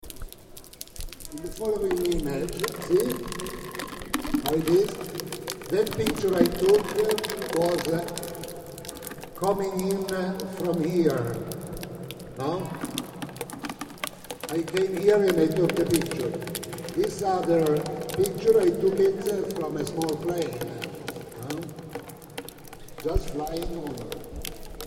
M.Lampis: Voice and Rain